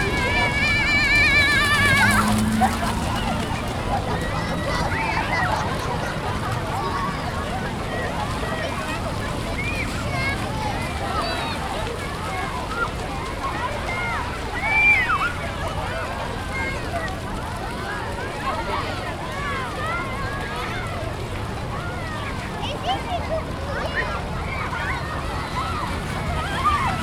Warm day, children running and shouting, their feet hitting the water mirror.
[Tech.info]
Recorder : Tascam DR 40
Microphone : internal (stereo)
Edited on : REAPER 4.611
13 April 2014, ~16:00